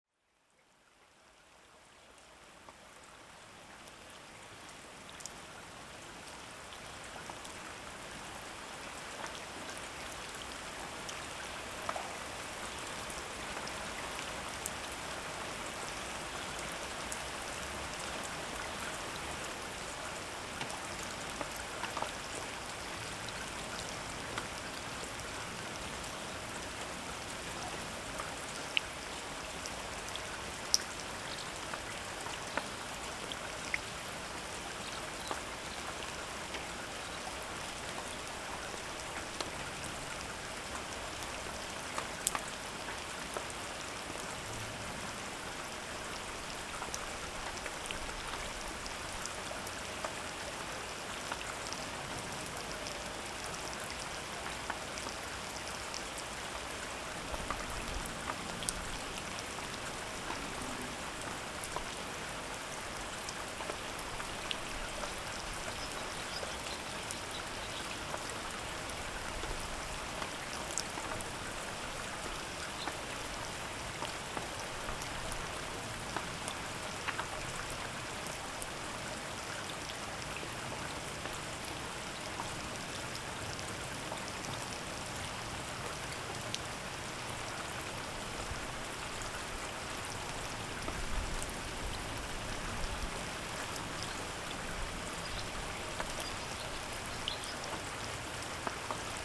Pothières, France - Rain
In the small and almost abandoned Pothières village, rain is persistently falling. Waiting in a bus stop shanty, we are waiting the rain decreases.
31 July 2017, 14:00